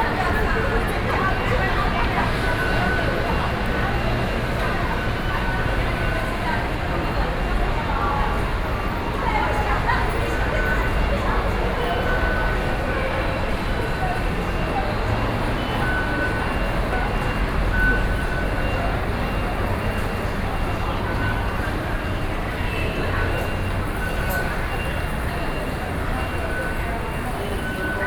New Taipei City, Taiwan - In the MRT stations